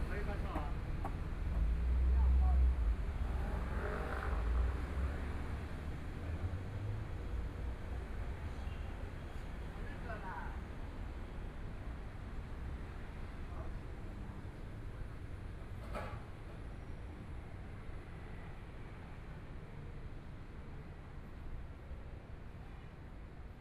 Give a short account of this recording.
walking in the small streets, Environmental sounds, Traffic Sound, Binaural recordings, Zoom H4n+ Soundman OKM II